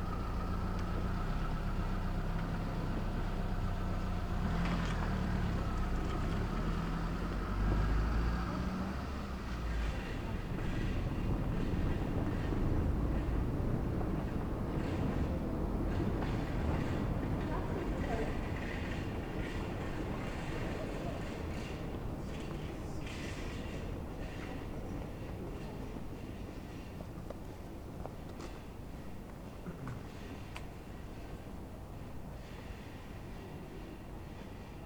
Berlin: Vermessungspunkt Friedel- / Pflügerstraße - Klangvermessung Kreuzkölln ::: 03.06.2011 ::: 02:07
Berlin, Germany, 3 June 2011